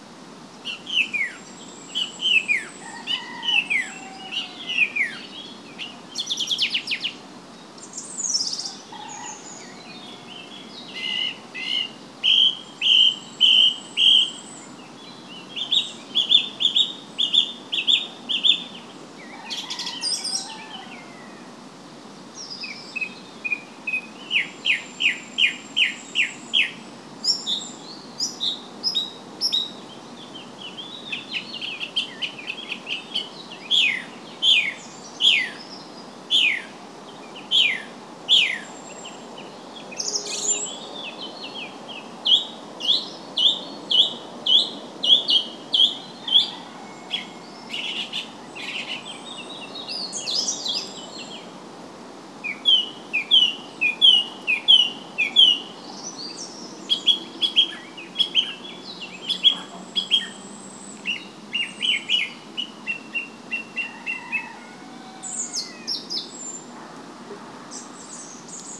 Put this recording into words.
Parque da Lavandeira in Vila Nova de Gaia, recording birds with a Sony M10.